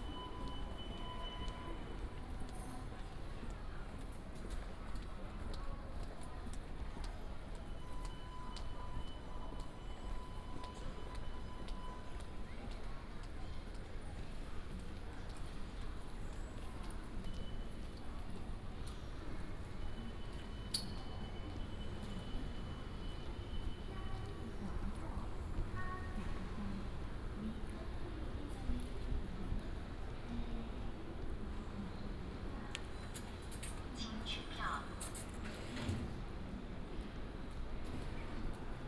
THSR Hsinchu Station, Zhubei City - Walking at the station
Walking at the station